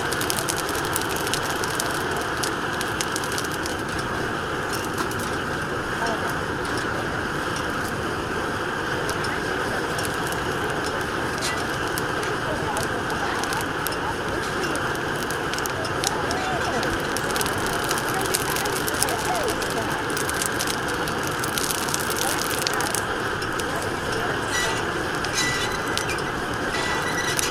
hatch chiles being roasted at farmers' market